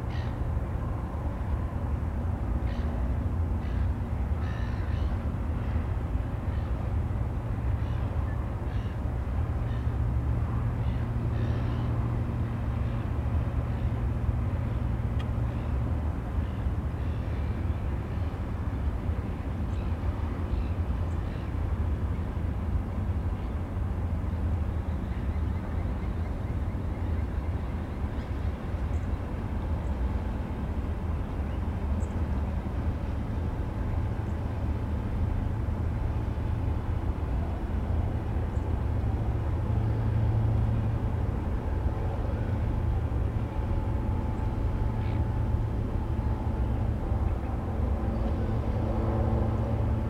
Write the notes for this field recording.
Recorded with 4060s hanging from the guard rail at the lookout point of this reserve.. the harbour and city are a bustling backdrop to this beautiful location - DPA 4060s, custom preamps, H4n